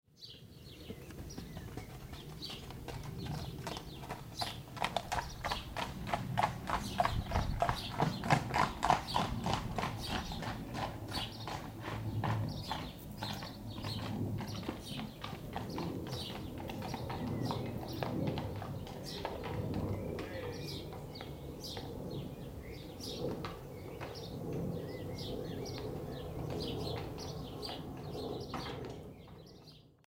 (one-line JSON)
{"title": "propach, horses - Propach, horses", "description": "man running to the shelter with two horses before thunderstorm.\nrecorded july 2nd, 2008.\nproject: \"hasenbrot - a private sound diary\"", "latitude": "50.85", "longitude": "7.52", "altitude": "256", "timezone": "GMT+1"}